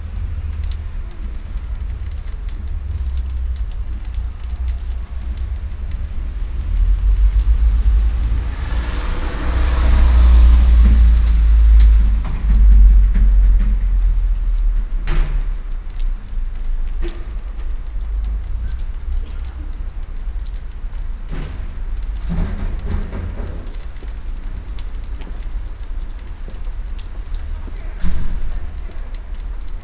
budapest, tomp utca, melting water drops from the house roof
morning time - ice and snow melting
and dropping fromm the house roofs - some cars and passengers passing bye slowly
international city scapes and social ambiences